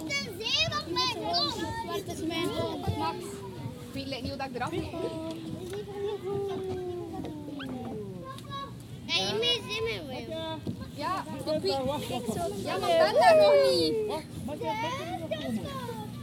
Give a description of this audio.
During a very sunny sunday afternoon, children playing in a big pirates boat.